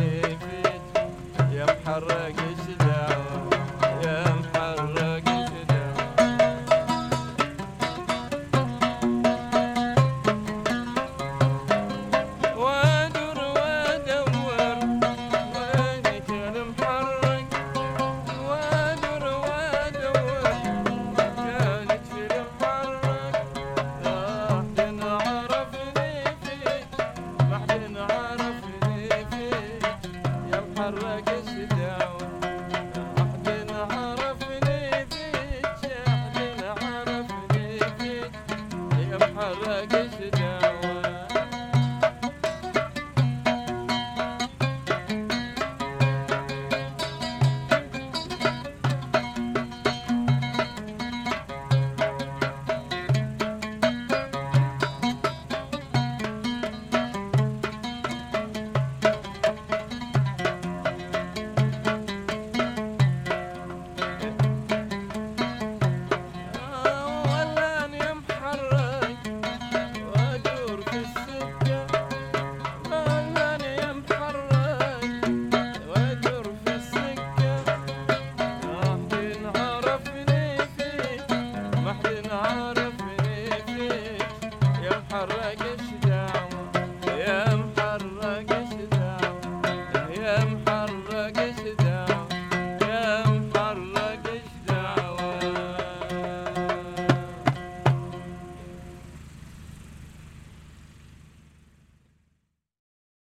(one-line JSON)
{"title": "Avenue, الساية،،, الساية، Bahreïn - Duo de musiciens - Busaiteen Beach - Barhain", "date": "2021-06-14 19:00:00", "description": "Duo Oud/Darbouka - Barhain\nBusaiteen Beach\nEn fond sonore, le groupe électrogène. Malgré mes demandes répétées, ils n'ont ni voulu l'éteindre ni se déplacer...", "latitude": "26.27", "longitude": "50.59", "timezone": "Asia/Bahrain"}